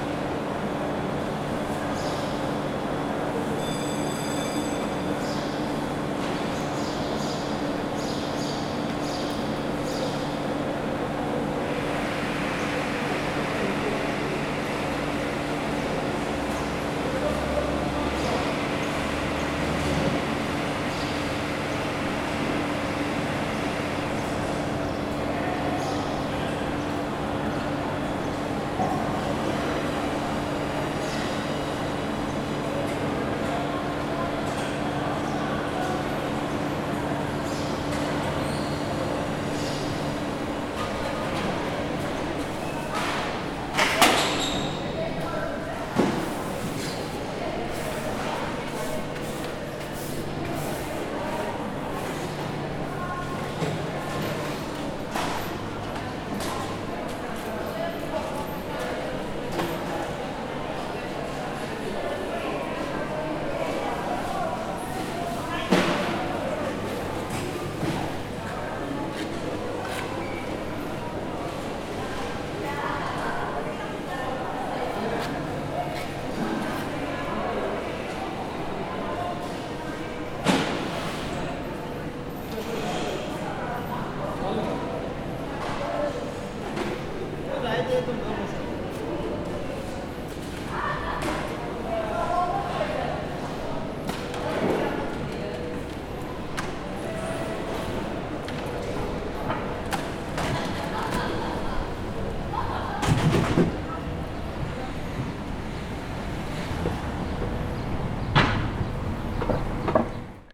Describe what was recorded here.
Inside the Ribeira Market, people, space resonance, vegetables and food chopping